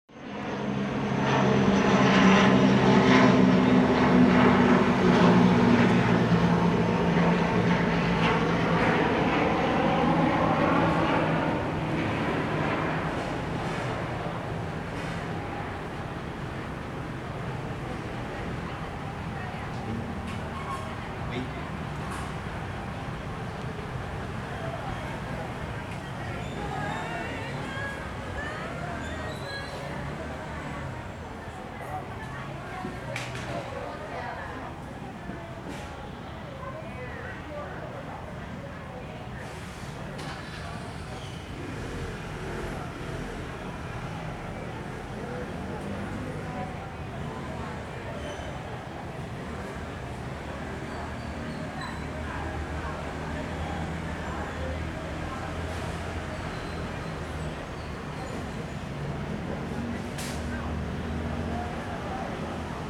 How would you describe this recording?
in the Park, Aircraft flying through, Near Market, Someone singing, Traffic Sound, Sony Hi-MD MZ-RH1 +Sony ECM-MS907